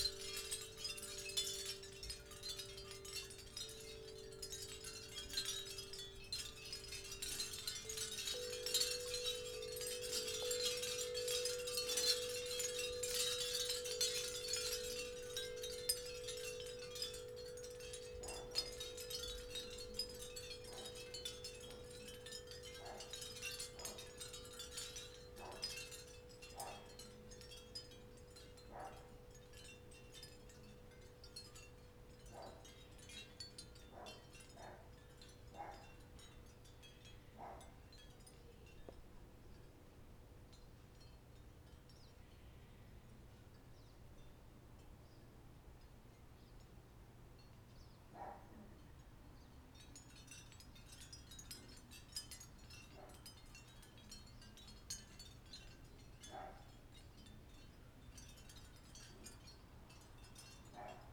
I love reading on the deck, to the sound of oystershell windchimes in the gentle morning breeze. Sometimes the wind kicks up high enough to engage the big 55" Corinthian Bells windchimes. Inside, Desi alerts to somebody walking by on the street and has to come out to sniff the air.